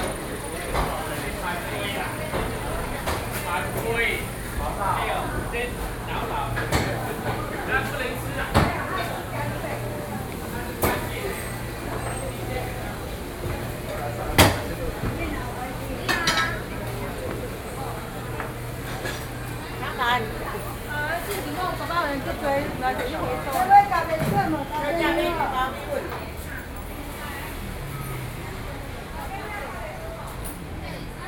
石牌自強市場, Taipei City - Traditional markets
Beitou District, Taipei City, Taiwan, 3 November 2012, 10:19